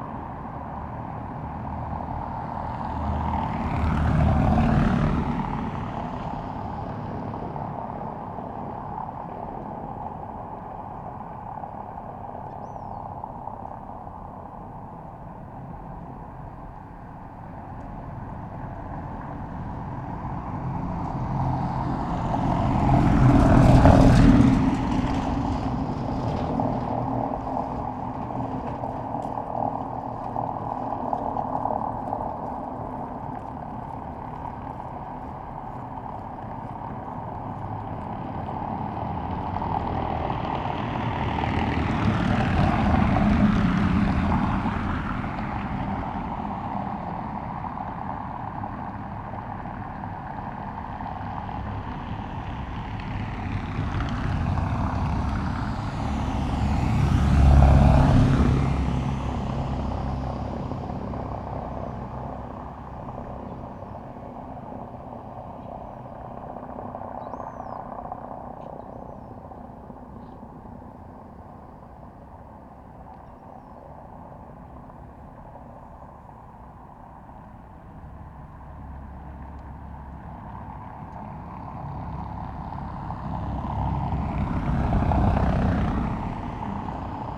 Marine Dr, Scarborough, UK - vehicles on a cobbled road ...
vehicles on a cobbled road ... traffic on Marine Drive Scarborough ... open lavalier mics clipped to a sandwich box ... bird calls from herring gulls ... after a ten minutes a peregrine falcon parked in the cliffs above the road and was distantly vocal for some time ... occasional voices and joggers passing by ...